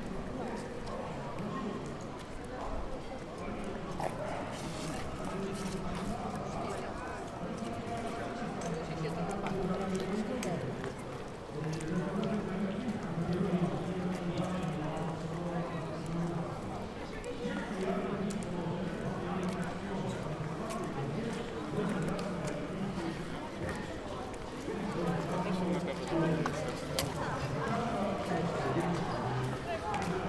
Maly Rynek, Kraków
Soundwalk along ul. Mikołajska from Rynek Główny (Main Square) to Mały Rynek, a local Pierogi Festival happening there.
August 2011